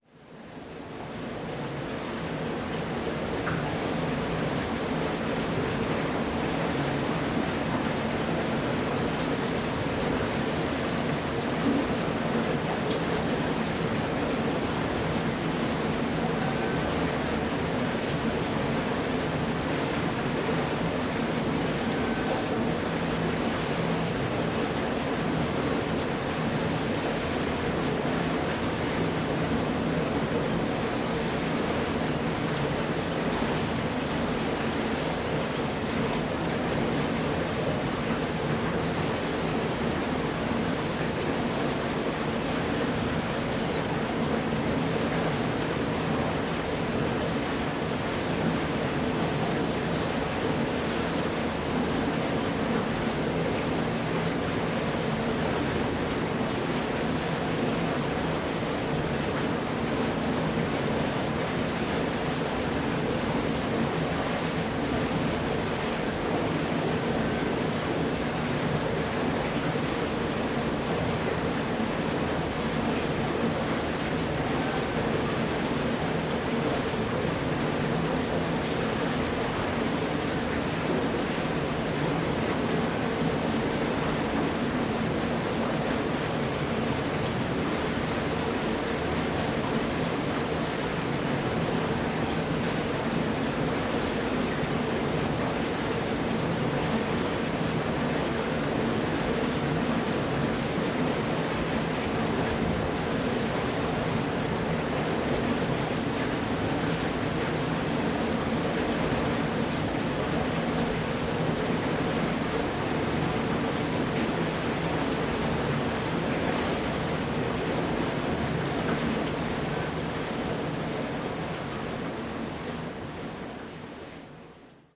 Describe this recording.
Water beeing sent to the small canal. Tech Note : SP-TFB-2 binaural microphones → Olympus LS5, listen with headphones.